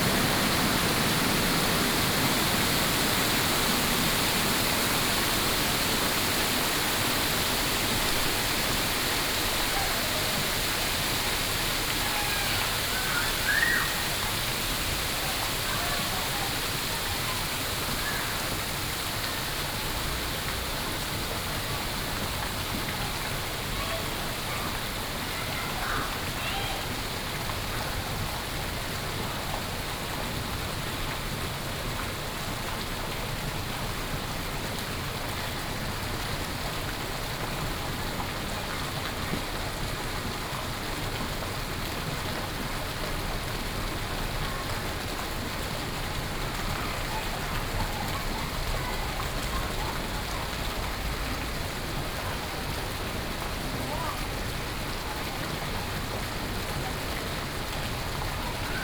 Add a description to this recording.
Fountain, Binaural recordings, Sony PCM D100+ Soundman OKM II